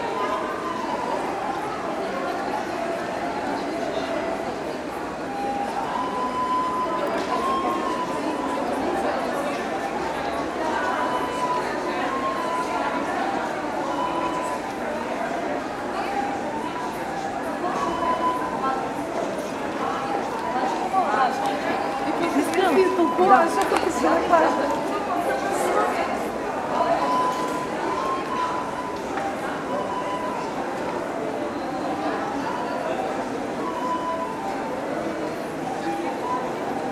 Skopje, Gradski Trgovski Centar - Flute Busker
Man playing a traditional flute in Gradski Trgovski Centar, Skopje.
Binaural recording.
Skopje, Macedonia (FYROM)